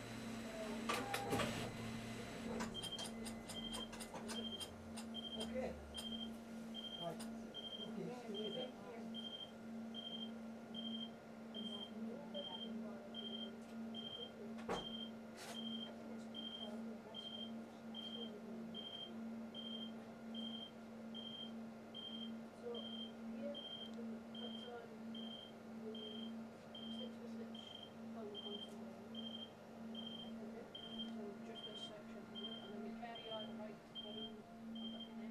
Shetland College UHI, Gremista, Lerwick, Shetland Islands, UK - Shima machines working full tilt
The University of Highlands and Islands has amazing resources, including state of the art computers, conferencing facilities, looms, knitting machines etc. There is also a room containing massive shima machines which are utilised not only by the college goers, but by small and local businesses also. Shima machines are enormous Japanese knitting machines, which can churn out miles of complex and extremely fine knitted fabric in a staggering array of patterns and styles. Unlike the domestic knitwear machines which formed the backbone of the Shetland knitwear industry in the mid-twentieth century, the Shima machines have all their moving parts concealed inside a giant steel and glass housing. The shima machines are several metres long and over a metre tall, and are controlled via computer interfaces. Pieces are created inside the shima machines, and then linked together by the extremely skilled linkers who work in this division of the University.